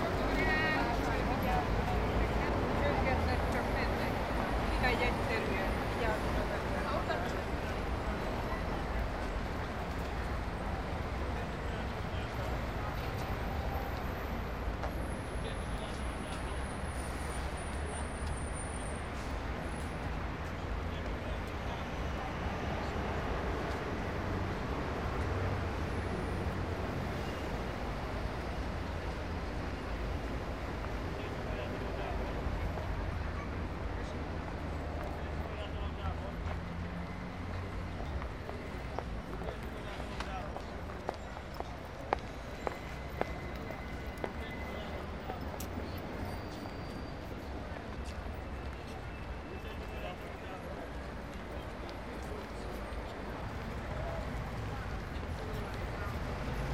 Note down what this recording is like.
heavy car traffic and trams plus footsteps, international city scapes and social ambiences